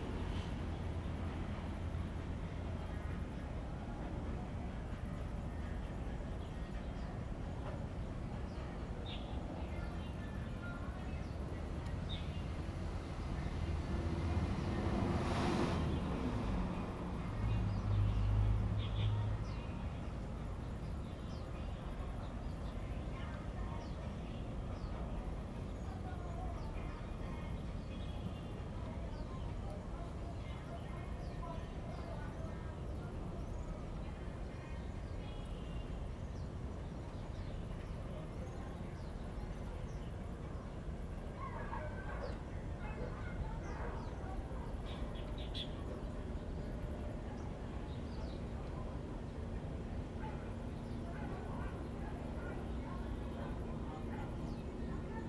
中正公園遊客中心, Keelung City - Visitor Centre
Visitor Centre, in the Park, Ambient sound
Zoom H2n MS+XY +Sptial Audio